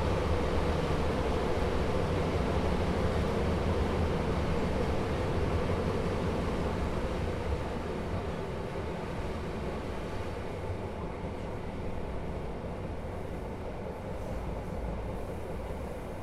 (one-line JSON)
{"title": "Rail tracks, Katowice, Poland - (823) Old train on clickety-clack tracks", "date": "2021-06-27 14:52:00", "description": "Recording of a train ride made from the inside with the recorded placed directly on the train floor.\nRecorded with UNI mics of Tascam DR100mk3", "latitude": "50.26", "longitude": "19.07", "altitude": "259", "timezone": "Europe/Warsaw"}